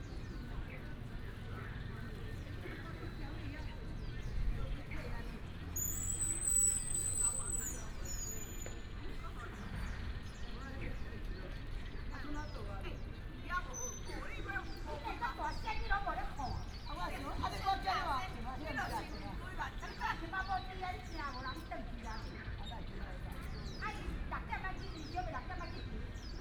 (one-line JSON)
{"title": "Lanzhou Park, Datong Dist., Taipei City - in the Park", "date": "2017-04-09 16:39:00", "description": "in the Park, sound of the birds, Traffic sound, frog sings", "latitude": "25.06", "longitude": "121.52", "altitude": "11", "timezone": "Asia/Taipei"}